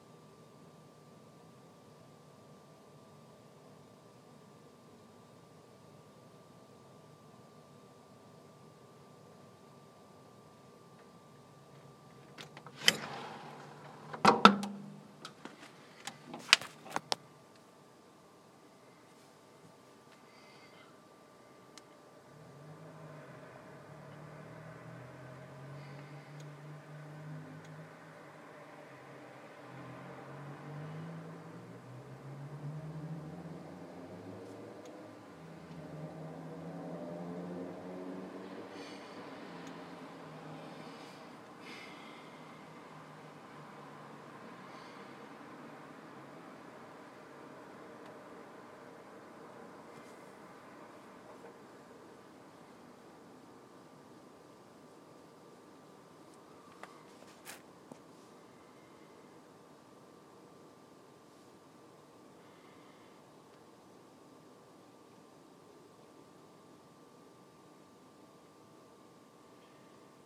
Ponávka, Brno-střed, Česko - Půl na půlnoc
Bylo půl na půlnoc a bylo to krásné